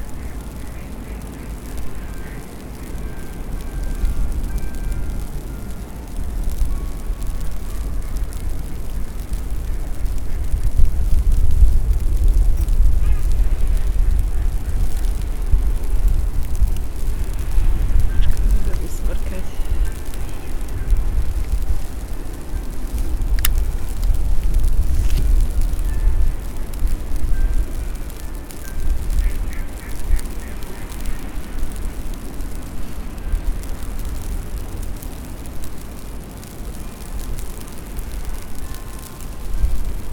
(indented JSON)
{
  "title": "Snowflakes and people walking dogs in railways bridge",
  "date": "2010-01-11 12:31:00",
  "description": "Sparkling snowflakes. Like spinners and pins. You can hear the bells from Vyšehrad, waterfowl and dogs. People walking dogs are rushing. Bridges are full of stories. Last days in Prague are very white and magic.",
  "latitude": "50.07",
  "longitude": "14.41",
  "altitude": "191",
  "timezone": "Europe/Prague"
}